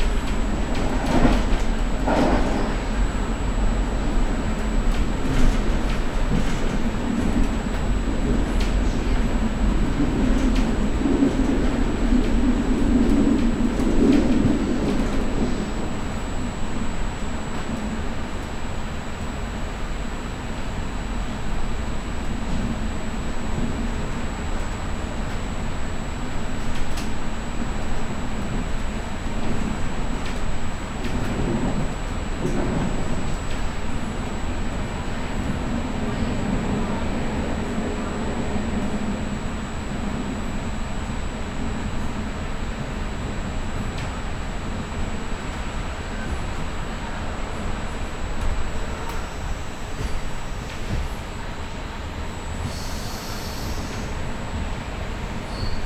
smooth ride on a train to Corniglia. the car is empty and very well isolated. almost no sounds are coming from the outside. yet the electronic circuits, other mechanisms and the body of the car produce many other sounds. continuous high pitched buzz, pressurized air blasts, "light saber" clangs.
La Spezia La Spezia, Italy